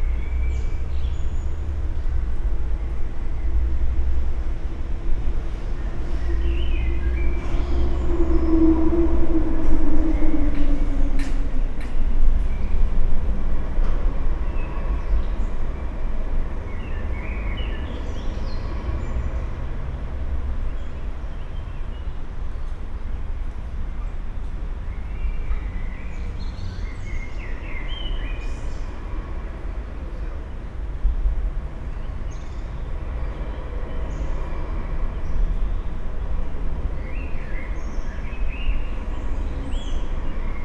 resonances and traffic sounds below Pont Bleue
(Olympus LS5, PrimoEM172)